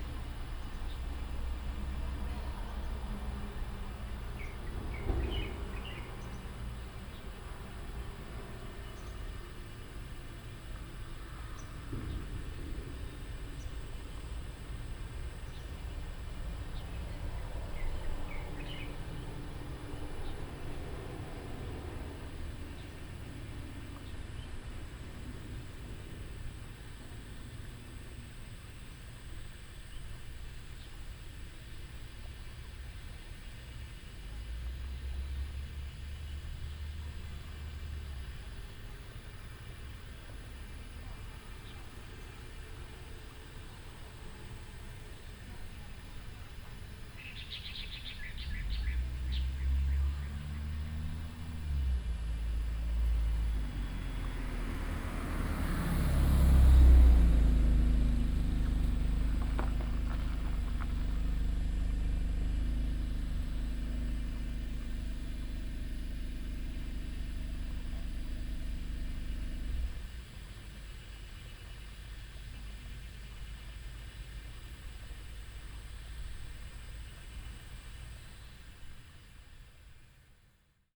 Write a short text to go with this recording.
Cicadas sound, Dogs barking, Ecological pool, A small village in the evening